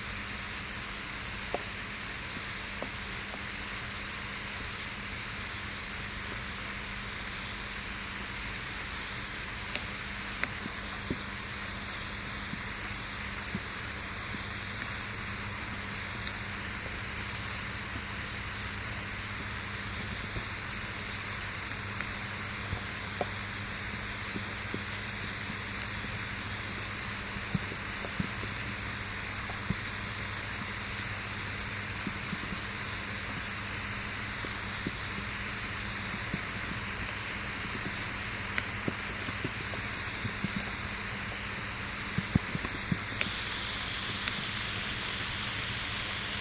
Shap, Penrith, UK - Rain on power lines
rain causing power lines to discharge. Zoom H2n.
3 January 2022, 15:40